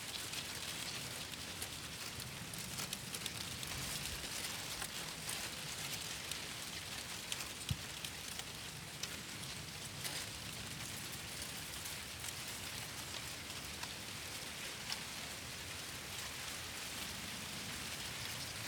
Castiglione del Lago, Perugia, Italien - Via Belveduto, Castiglione del Lago, Perugia - Wind in the cornfield, some sounds from a building lot
Via Belveduto, Castiglione del Lago, Perugia - Wind in the cornfield, some sounds from a building lot.
[Hi-MD-recorder Sony MZ-NH900 with external microphone Beyerdynamic MCE 82]